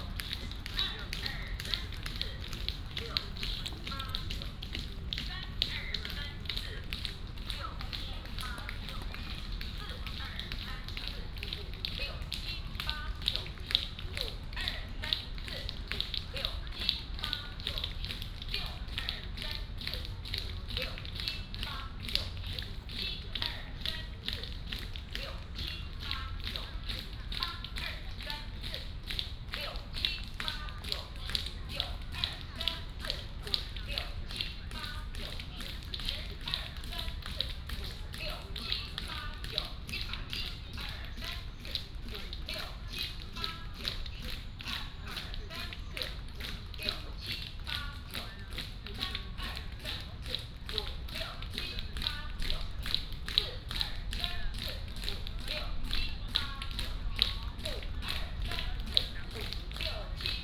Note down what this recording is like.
in the Park, Many old people gathered, Do aerobics, Beat the foot